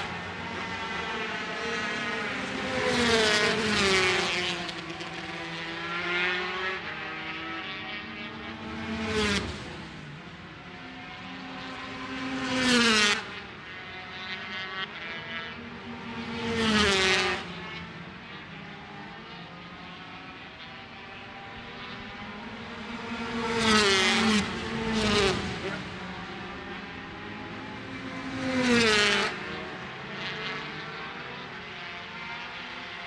Scratchers Ln, West Kingsdown, Longfield, UK - British Superbikes 2005 ... 125 ...
British Superbikes 2005 ... 125 free practice one ... one point stereo mic to minidisk ...